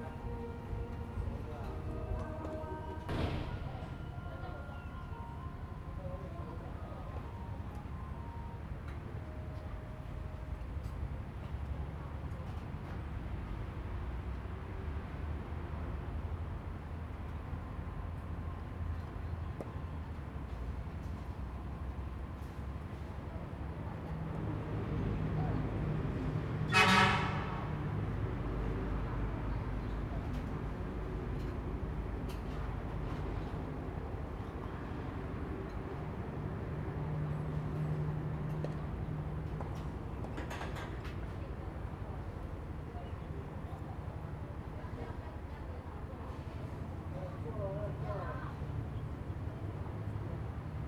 small Town, Sitting under a tree
Zoom H2n MS +XY